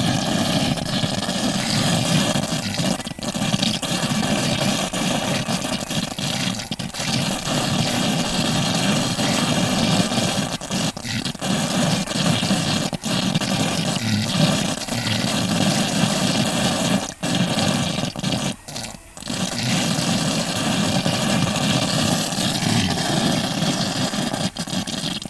water noises, gutter, may 31, 2008 - Project: "hasenbrot - a private sound diary"